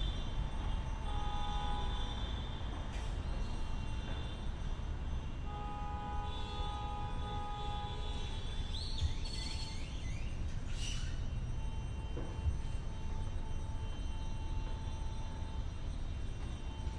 W Willie Nelson Blvd, Austin, TX, USA - Rent Strike Protest
Recorded w/ Sound Devices 633 and LOM Stereo USIs
Travis County, Texas, United States of America